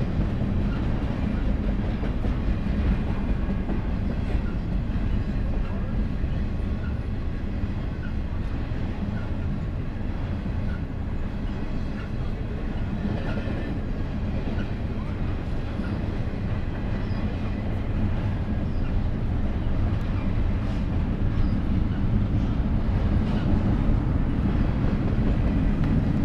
neoscenes: Skull Valley train crossing